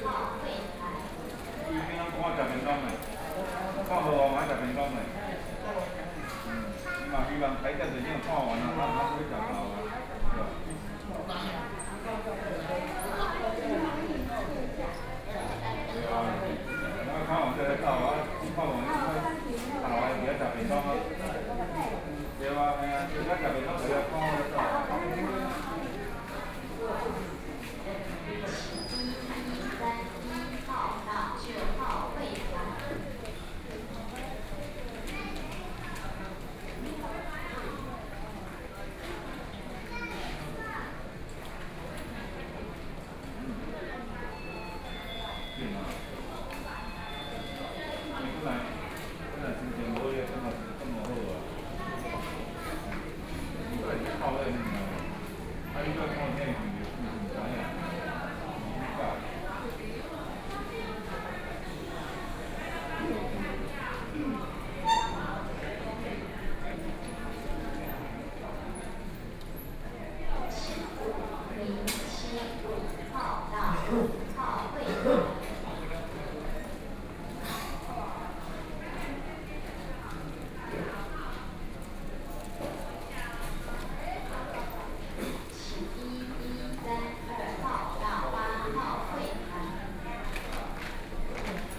Lotung Poh-Ai Hospital, Yilan County - In the hospital
In the hospital in front of the counter prescriptions, Binaural recordings, Zoom H4n+ Soundman OKM II
Yilan County, Taiwan, 7 November 2013, ~09:00